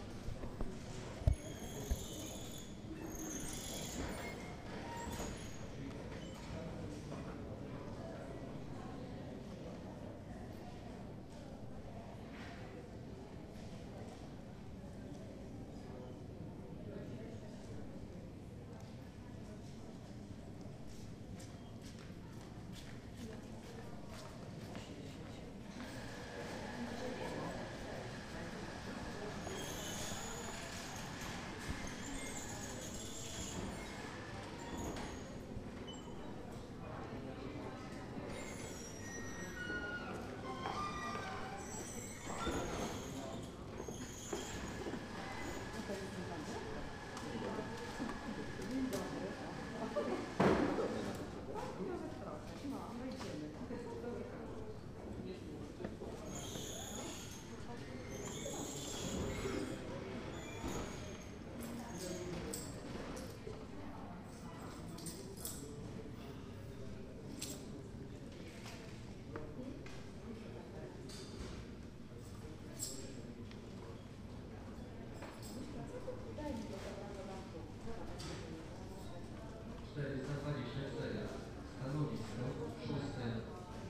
Stare Miasto, Breslau, Polen - waiting hall
The waiting area in a bank; notice the wonderful swing of the huge antique doors.
Wroclaw, Poland